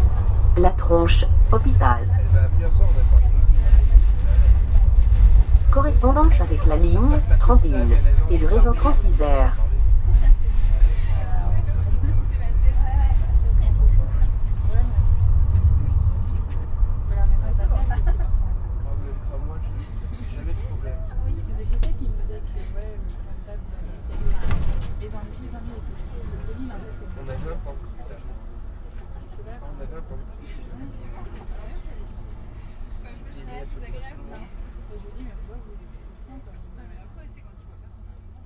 {
  "title": "Agn s at work La Tronche/Hopital RadioFreeRobots",
  "latitude": "45.20",
  "longitude": "5.74",
  "altitude": "202",
  "timezone": "GMT+1"
}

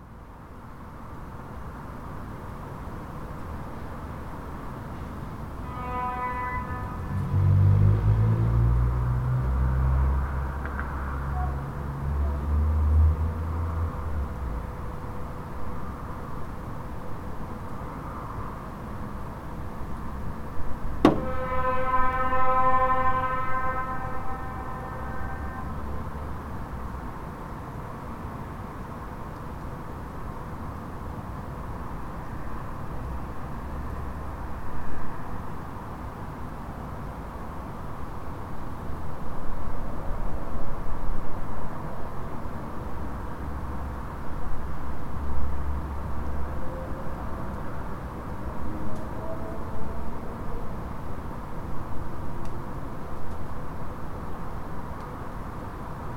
{
  "title": "Dekerta, Kraków, Poland - (773 UNI) Transportation étude",
  "date": "2021-05-21 01:14:00",
  "description": "Surprisingly well-played étude that happened around 1 am.\nRecorded with UNI mics of Tascam DR100 MK3.",
  "latitude": "50.05",
  "longitude": "19.96",
  "altitude": "202",
  "timezone": "Europe/Warsaw"
}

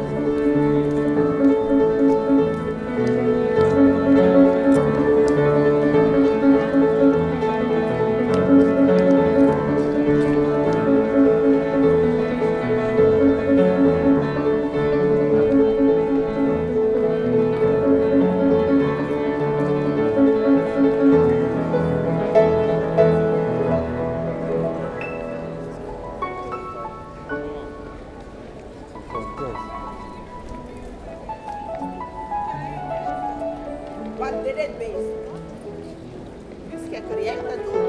{
  "title": "Antwerpen, Belgique - The Meir street ambiance, piano player",
  "date": "2018-08-04 13:00:00",
  "description": "Into the commercial street called Meir, on a colorful saturday afternoon, people walking quietly. A piano player, called Toby Jacobs. He's speaking to people while playing !",
  "latitude": "51.22",
  "longitude": "4.41",
  "altitude": "10",
  "timezone": "GMT+1"
}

